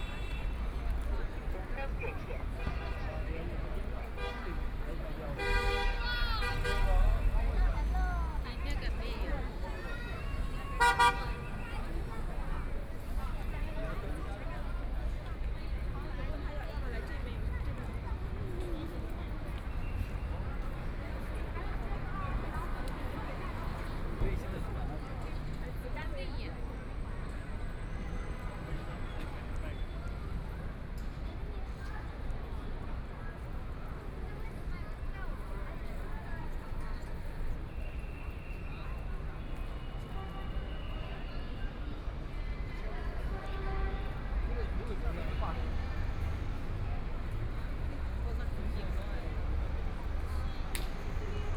east Nanjing Road, Shanghai - Walking on the road
Walking on the road, walking in the Business Store hiking area, Very many people and tourists, Binaural recording, Zoom H6+ Soundman OKM II